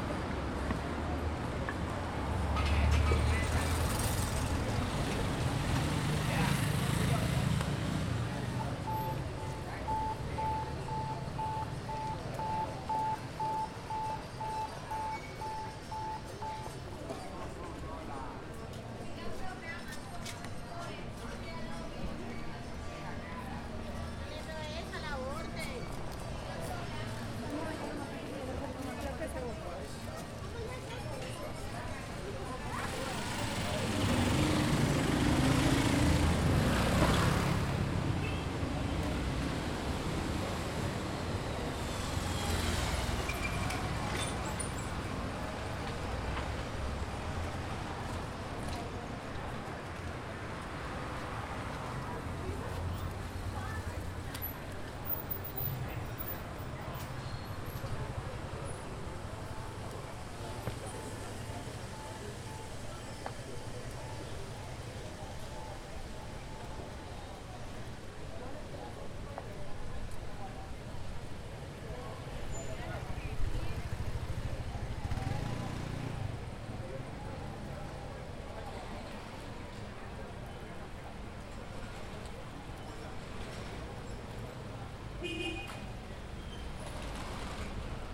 Centro de Bogotá, Desde carrera 7 con calle 16 hasta la zona de libreros y vendedores ambulantes, carrera 9 con calle 16 un miercoles a las 11:30 am, Grabadora Tascam DR-40.